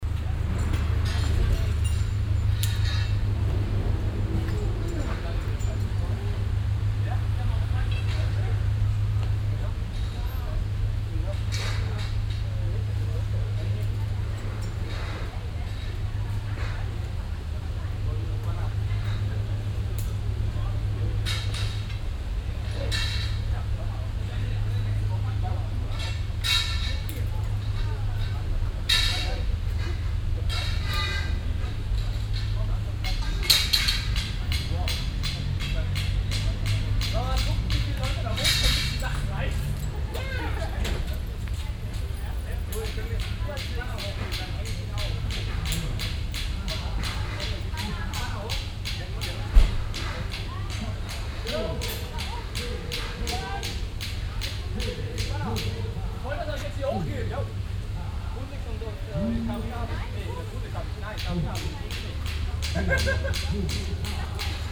{
  "title": "cologne, altstadt, rheinufer, an eisenbahnbrücke",
  "date": "2008-12-29 21:26:00",
  "description": "an historischer eisenbahnbrücke, nachmittags, zugüberfahrt im hintergrund aufbau eines marktstandes\nsoundmap nrw: social ambiences/ listen to the people - in & outdoor nearfield recordings",
  "latitude": "50.94",
  "longitude": "6.96",
  "altitude": "45",
  "timezone": "Europe/Berlin"
}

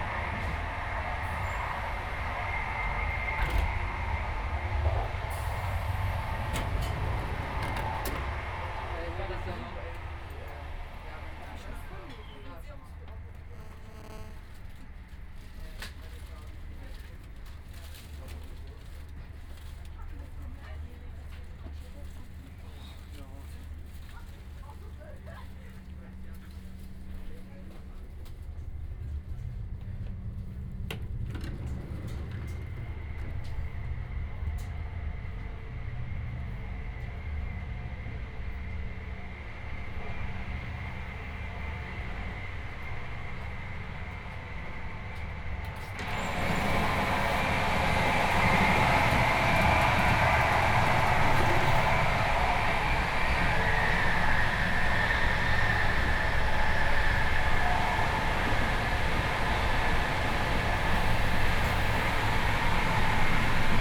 {
  "title": "porta westfalica - soundwalk in noisy train",
  "date": "2010-06-28 10:50:00",
  "description": "soundwalk in an old and noisy IC train, passing porta westfalica (binaural)",
  "latitude": "52.24",
  "longitude": "8.92",
  "altitude": "46",
  "timezone": "Europe/Berlin"
}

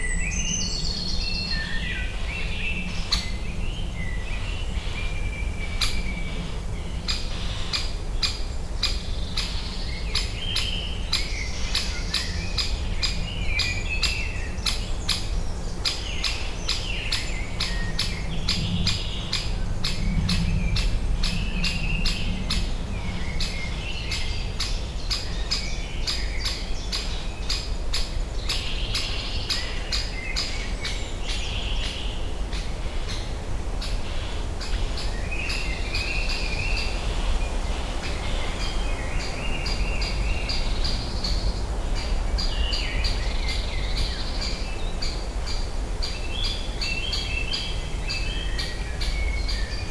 {
  "title": "Gogulec nature reserve, Zlotkowo - spring forest ambience",
  "date": "2019-05-19 17:27:00",
  "description": "recorded in the heart of a Gogulec nature reserve, north from Poznan on a rainy afternoon. The place is very overgrown with dense vegetation. Bird activity increasing after short rain. inevitable plane rumble. (roland r-07)",
  "latitude": "52.52",
  "longitude": "16.84",
  "altitude": "102",
  "timezone": "Europe/Warsaw"
}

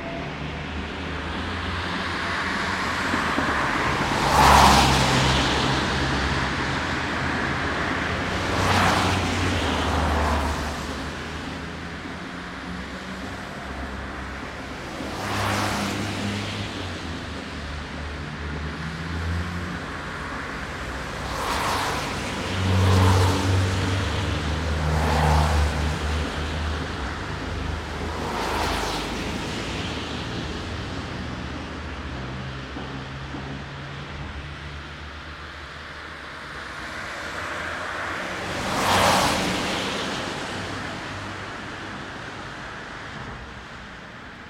{
  "title": "Strada Independenței, Brașov, Romania - 2020 Christmas in Brasov, Transylvania",
  "date": "2020-12-25 15:50:00",
  "description": "Recorded near a traffic bridge over a railway. In the distance a makeshift \"band\" with brass and drums plays some tunes to get money from people living in apartment blocks. I like how that sound disappears in the equally rhythmic sound of cars passing by. Recorded with Superlux S502 Stereo ORTF mic and a Zoom F8 recorder.",
  "latitude": "45.67",
  "longitude": "25.60",
  "altitude": "552",
  "timezone": "Europe/Bucharest"
}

